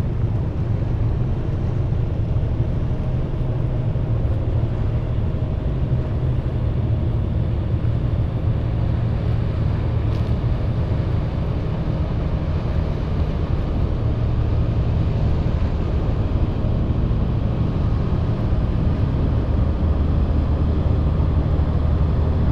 A very long double boat is passing by on the Seine River. It's the Dauphin from Lafarge. It's an industrial boat pushing two enormous containers. It's transporting sand and gravels, coming from the nearby quarry.